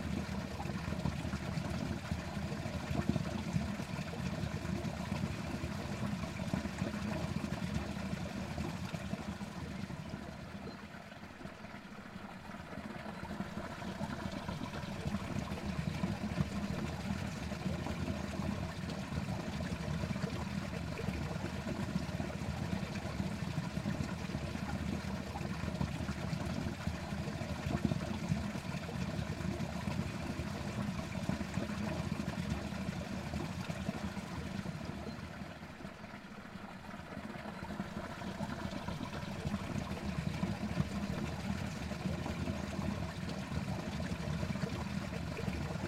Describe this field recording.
stream running under farm, SDRLP project funded by The Heritage Lottery Fund